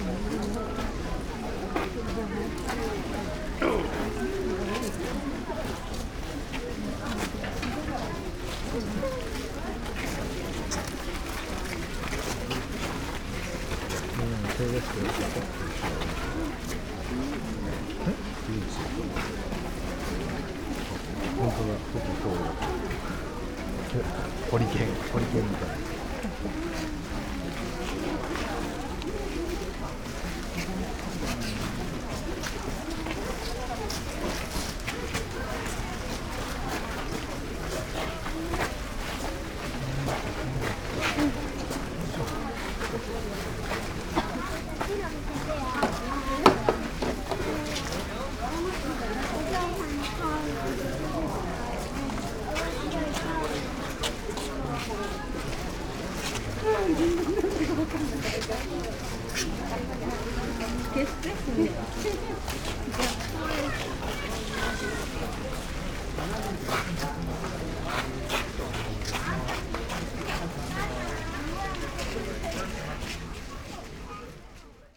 gravel path, Ginkakuji gardens - snail slow moving line of people
gardens sonority, after the rain, murmur of people
Kyōto-fu, Japan